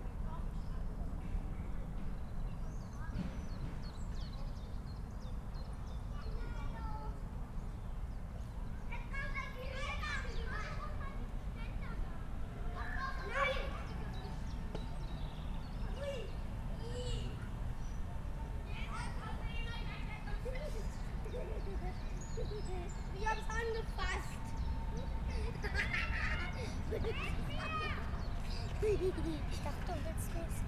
Moorwiese, Berlin Buch, Deutschland - young forest, adventure playground
The change between clearing areas and young wood stands, between light and shade, characterises the image of the nature experience area Moorwiese (slightly more than 5,000 m²). This pilot area was created in close cooperation with the Pankow Youth Welfare Office and the Spielkultur Berlin-Buch association. It is located in the immediate vicinity of the Buch S-Bahn station, adjacent to an adventure playground and offers families and children variety in the direct vicinity of the large housing estates. Together with the adjacent open landscape and in the vicinity of the adventure playground, this nature experience area has a special attraction for children. The Moorwiese nature experience area was opened in September 2016.
(Sony PCM D50, DPA4060)